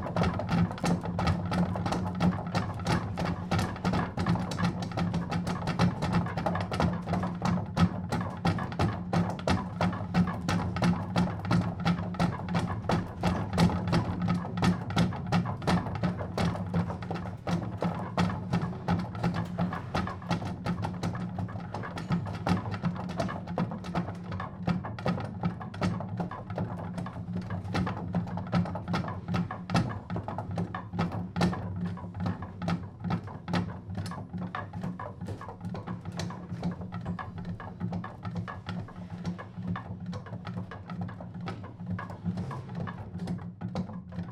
Parc de la Tourelle, Achicourt, France - Moulin d'achicourt
Achicourt (Pas-de-Calais)
Au moulin de la Tourelle, on moud encore la farine "à l'ancienne"
28 June 2020, 09:00